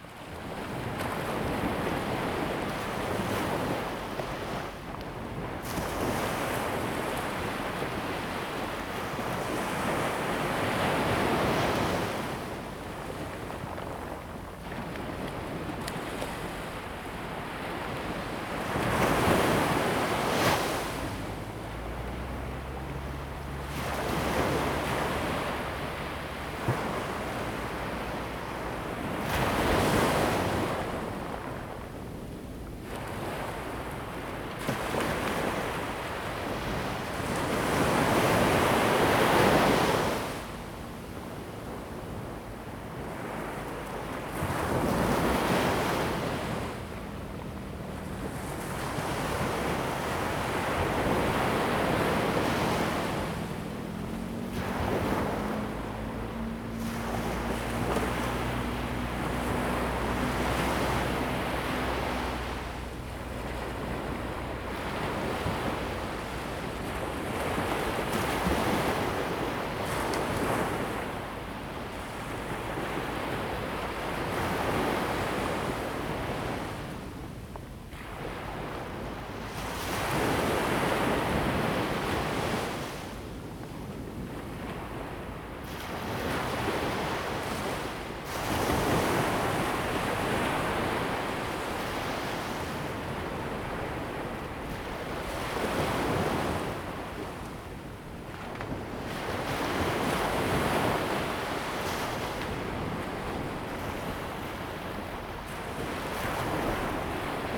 車城鄉福安路, Checheng Township - Close to the wave
Sound of the waves, Close to the wave
Zoom H2n MS+XY